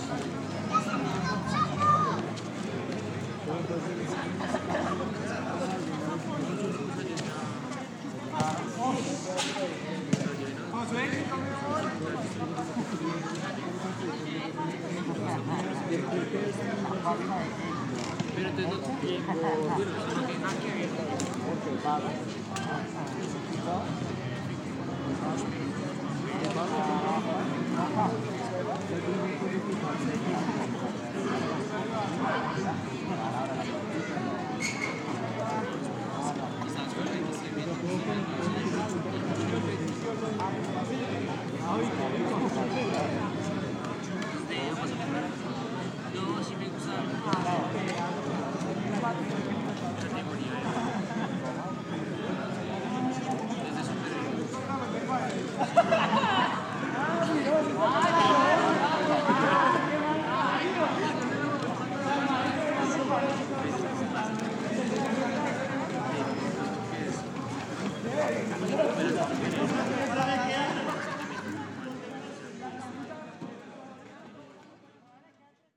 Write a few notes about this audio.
Neighborhood Park No. 2 New Villa mayor, in this screenshot you can hear a busy park, a lot of people talking, and eating there is a nearby arepa and/or playing. Near the park there is a small tavern, this is the origin of the music that can be heard in the distance, on the other hand, cars and motorcycles are heard circulating in the area.